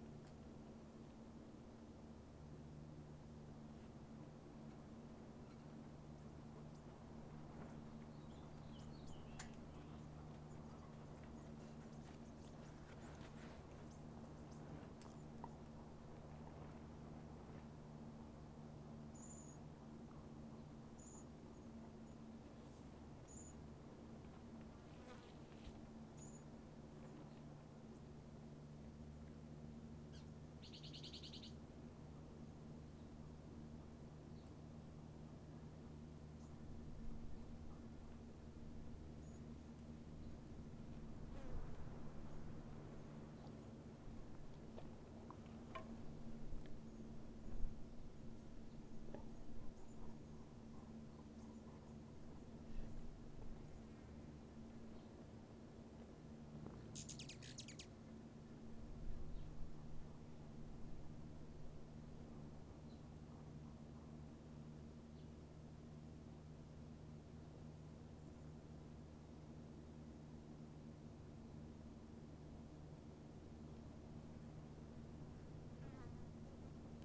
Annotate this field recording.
hummingbirds and bamboo windchimes flies and maybe a panting dog and more all serenade chinqi on this hot summer morn... zoomh4npro